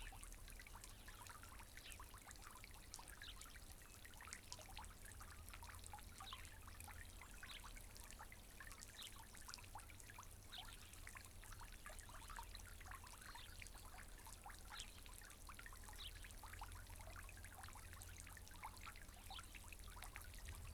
Latvia, Gārsene parish, at fountain